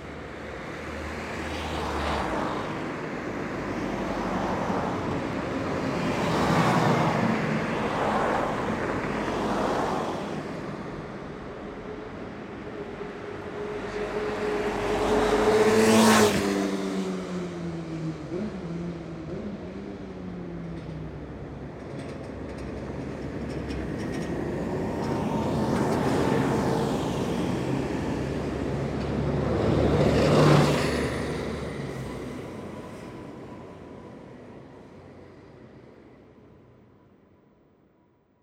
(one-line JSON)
{"title": "Denmark Hill", "date": "2010-04-16 10:46:00", "description": "Recorder during the flypath closure week due to the ash cloud.\nRecorder: Edirol R4 Pro\nMicrophones: Oktava MK-012 in Bluround® setup", "latitude": "51.46", "longitude": "-0.09", "altitude": "43", "timezone": "Europe/London"}